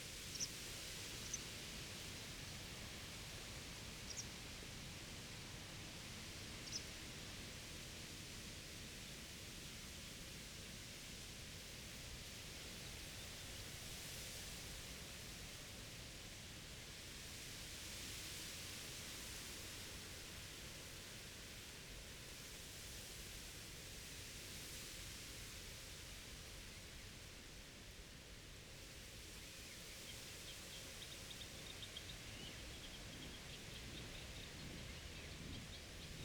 workum: lieuwe klazes leane - the city, the country & me: wind-blown reed
wind-blown reed, young coots and other birds, windturbine in the distance
the city, the country & me: june 22, 2015
22 June 2015, ~14:00